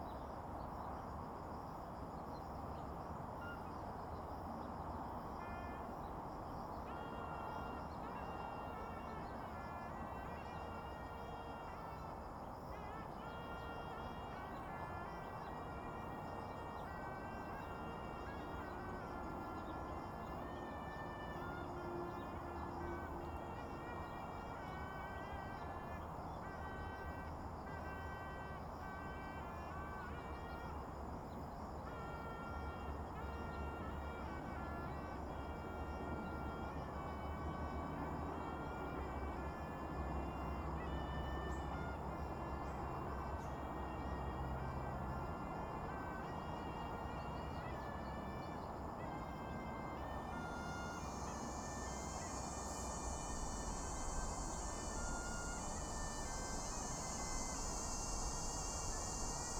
金獅步道, 新竹縣湖口鄉 - traffic sound
Near high-speed railroads, traffic sound, birds sound, Suona
Zoom H2n MS+XY
Hsinchu County, Taiwan, 12 August 2017